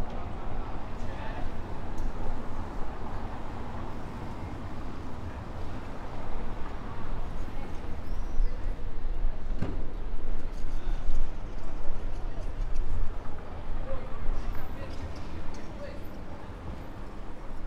Perugia, Italia - works in front of the post office